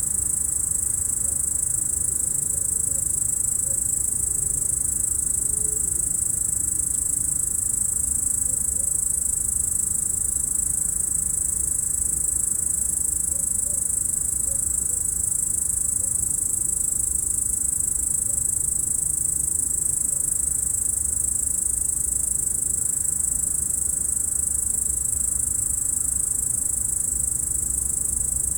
Bourdeau, France - Belvédère, belaudière.
Route du col du Chat virage belvédère, les insectes et les bruits de la vallée paysage sonore au crépuscule. Enregistreur Tascam DAP1 DAT. Extrait d'un CDR gravé en 2006 .